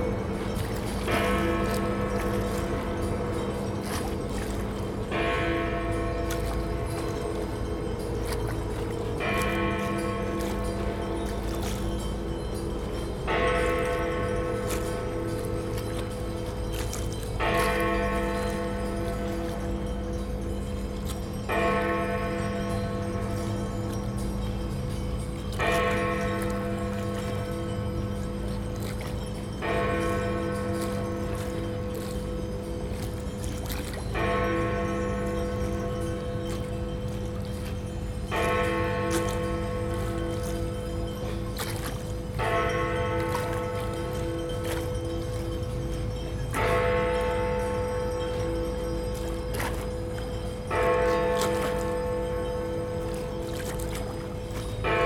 Big Ben Chiming 30 Times - Westminster, London, UK - Big Ben Chiming 30 Times
This rare occurrence happened on the first day of the 2012 London Olympics at 12 minutes past eight in the morning, exactly 12 hours before a Red Arrows fly by at the Olympic Park at 20:12 hours. (The official ceremony started at 21:00).
27 July 2012